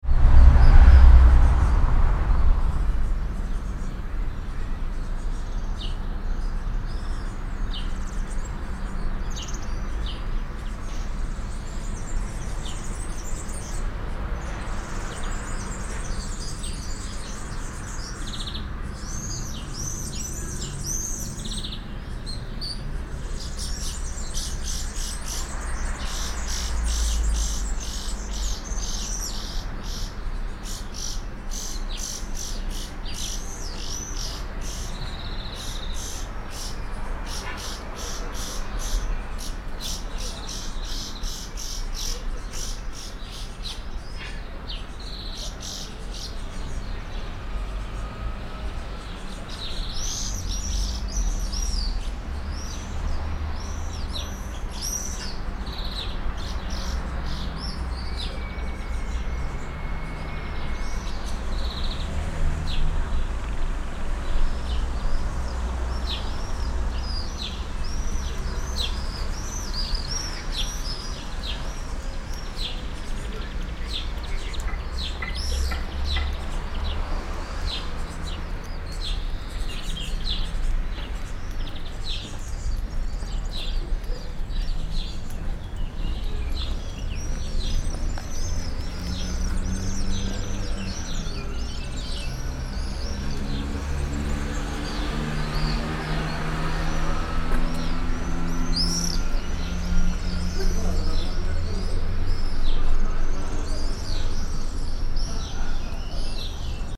Corso Roma, Serra De Conti AN, Italia - traffic and birds
ambience of the street, cars, ape piaggio, birds, swallows.
(Binaural: Dpa4060 into Shure FP24 into Sony PCM-D100)